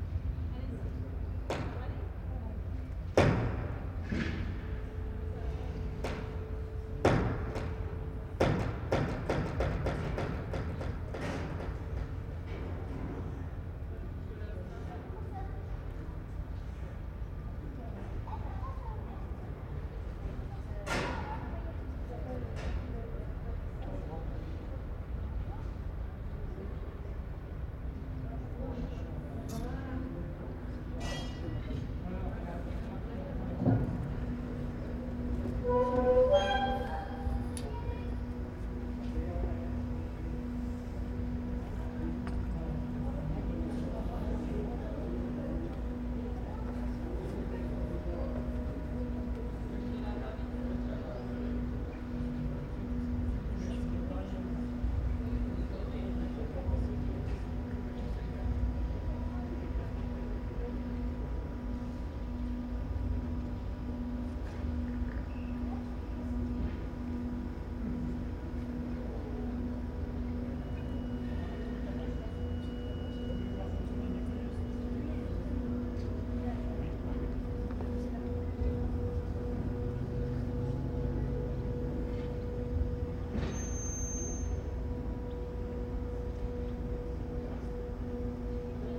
Quai du Platier, Paimpol, France - Passage écluse de Paimpol
Passage de l'écluse de Paimpol à bord d'un voilier, entrée au port. Enregistré avec un couple ORTF de Sennehiser MKH40 et une Sound Devices Mixpre3.
24 April 2022